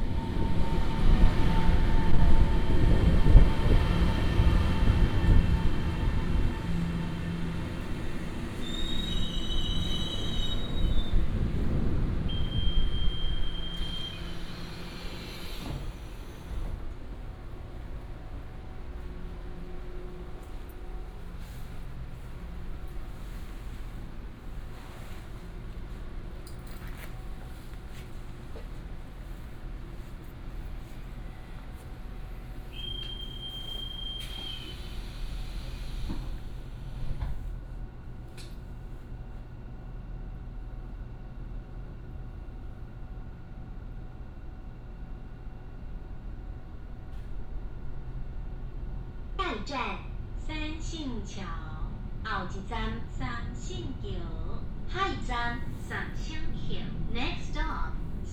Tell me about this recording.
Train traveling through, in the station platform